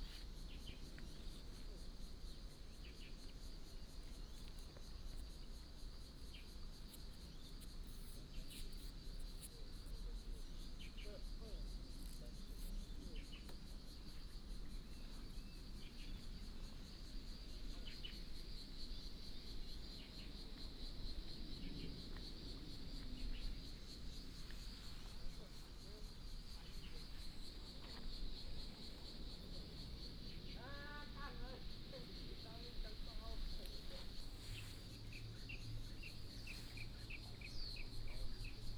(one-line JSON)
{"title": "卑南里, Taitung City - In farmland", "date": "2014-09-09 08:49:00", "description": "Birdsong, Crowing sound, Traffic Sound, the sound of aircraft flying, Train traveling through", "latitude": "22.78", "longitude": "121.12", "altitude": "36", "timezone": "Asia/Taipei"}